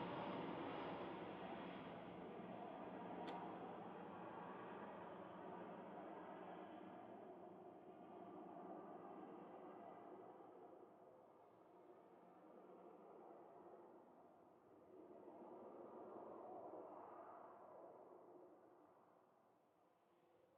Durweston, Dorset, UK - Chinook passover from Blandford camp.
Blandford Forum, Dorset, UK, April 2012